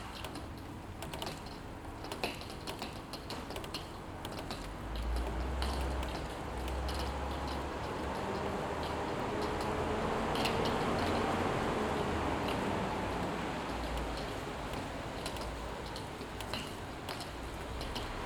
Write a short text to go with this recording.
Morning rain recorded from a window facing a courtyard using Zoom H2n.